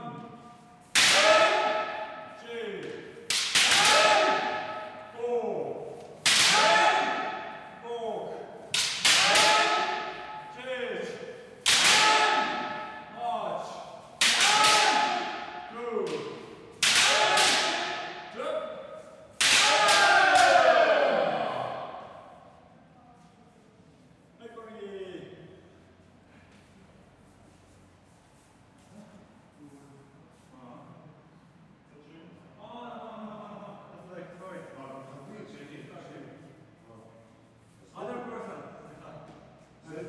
Tel Aviv-Yafo, Israel - Kendo practice
Kendo practice at Kusanone Kenyukai Kendo Israel (草ﾉ根剣友会) Tel Aviv
18 March 2016